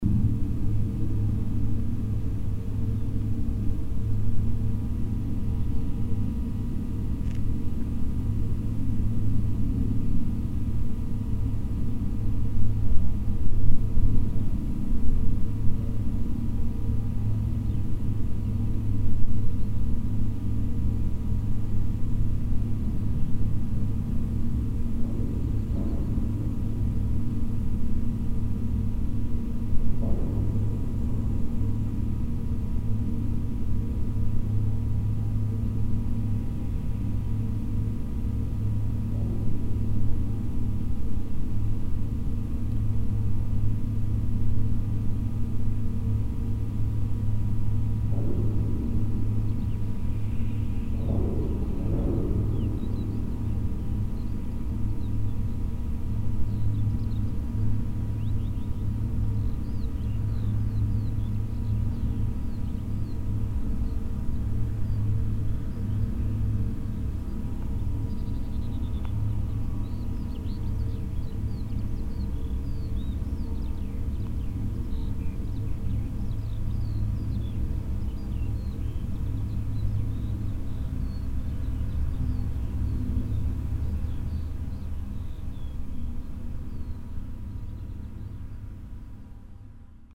heinerscheid, hupperdange, wind energy plant
At a wind mill of the new wind energy plant in the corn fields north of Heinerscheid. The sound of the generator and the inner mechanic of the metal wind mill tower. Sometimes audible the soft sound of the moving wind mill wings.
Heinerscheid, Hupperdange, Windenergiepark
Bei einer Windmühle des neuen Windenergieparks in den Kornfeldern nördlich von Heinerscheid. Das Geräusch des Generators und die innere Mechanik des metallenen Windmühlenturms. Ab und zu ist der leise Ton der sich bewegenden Windmühlenflügel zu hören.
Heinerscheid, Hupperdange, ferme éolienne
Le moulin de la nouvelle ferme éolienne dans le champ de maïs au nord de Heinerscheid. Le bruit du générateur et de la mécanique interne du mât métallique de l’éolienne. Parfois audible, le faible son des pales de l’éolienne qui tournent.
Project - Klangraum Our - topographic field recordings, sound objects and social ambiencess
Heinerscheid, Luxembourg, 11 July 2011, 00:17